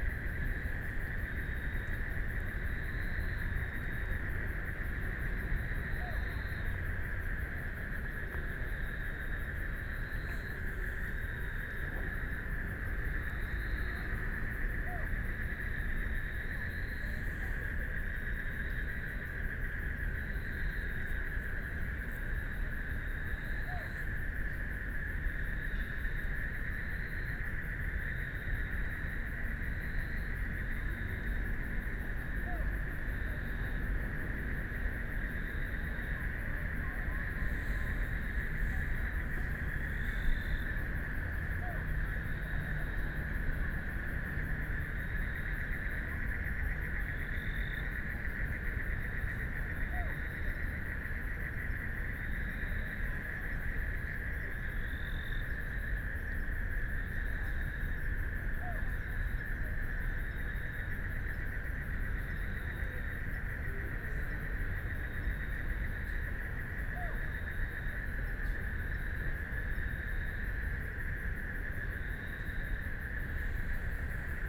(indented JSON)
{
  "title": "碧湖公園, Neihu District - The park at night",
  "date": "2014-03-19 19:38:00",
  "description": "The park at night, Frogs sound, Traffic Sound\nBinaural recordings",
  "latitude": "25.08",
  "longitude": "121.58",
  "altitude": "24",
  "timezone": "Asia/Taipei"
}